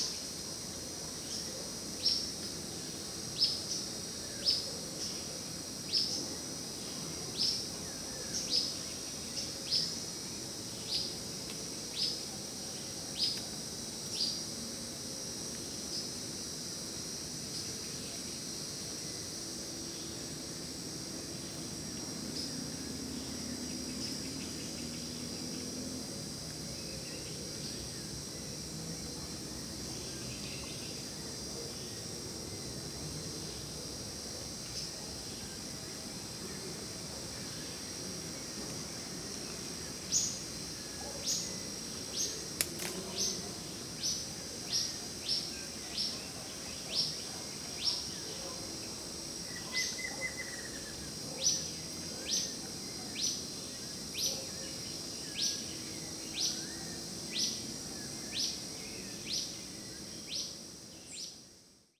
Vila Santos, São Paulo - State of São Paulo, Brazil - Trilha das Figueiras - ii
In the initial hiking path one can hear the antrophony felt at the place as well the variety of birds inhabiting the place.
- Tremembé, São Paulo - SP, Brazil, December 2016